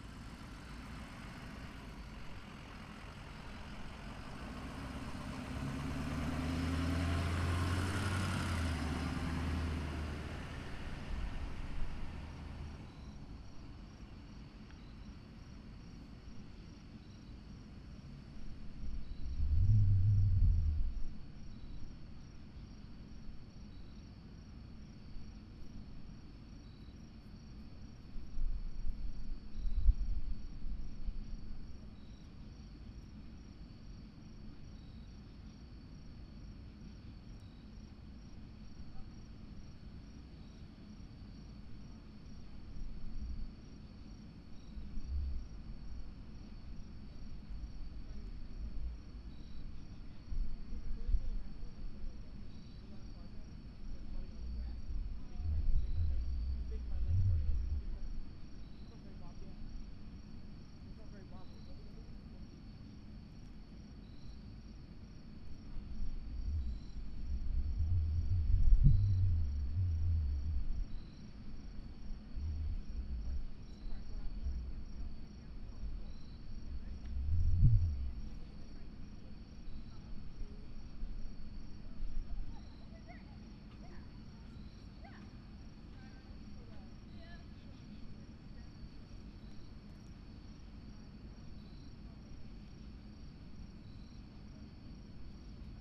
The College of New Jersey, Pennington Road, Ewing Township, NJ, USA - Outside ambience Next to the AIMM Building at TCNJ
Outside ambience, a truck is running next to the student center. Crickets are chirping